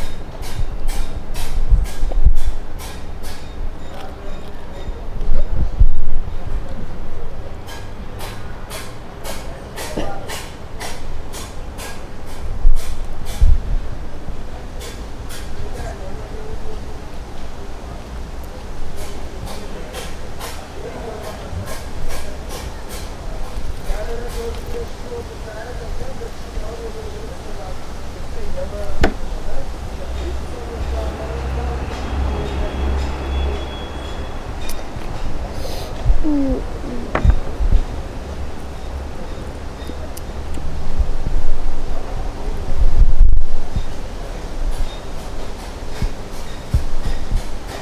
ул. Славянская, Нижний Новгород, Нижегородская обл., Россия - secret garden
sound recorded by members of the animation noise laboratory by zoom h4n
Приволжский федеральный округ, Россия, 22 July 2022, ~12:00